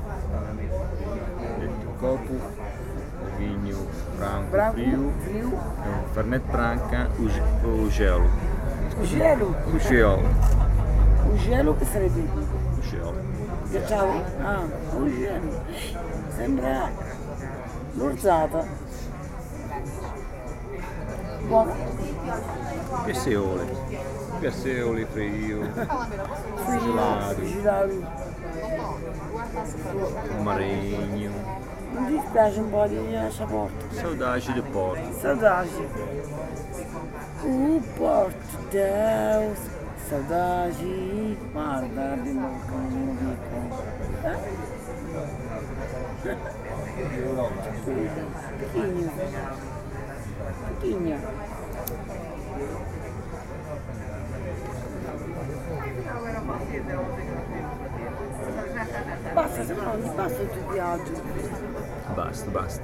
Porto, Largo de Mompilher
last night in porto, joking and singing with the Portuguese language
28 July 2010, 23:19